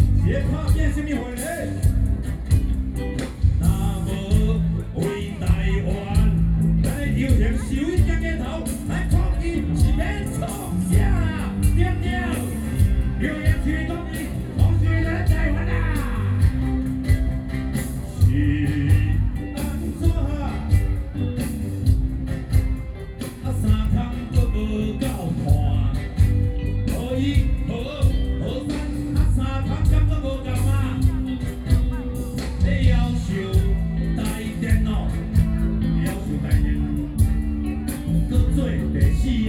Ketagalan Boulevard, Taipei - speech
anti-nuclear protesters, Former Vice President speech, Sony PCM D50 + Soundman OKM II
中正區 (Zhongzheng), 台北市 (Taipei City), 中華民國, May 2013